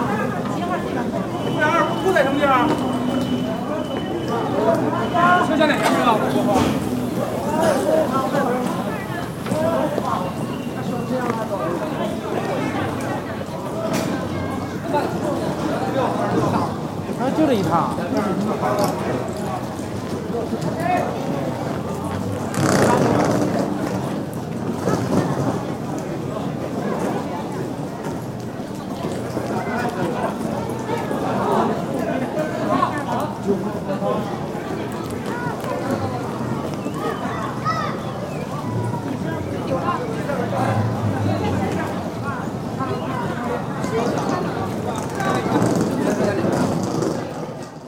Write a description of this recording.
dense travel movements on a platform of the main railway station - luggage transporter within people that rush to the train with their trolleys, international cityscapes - topographic field recordings and social ambiences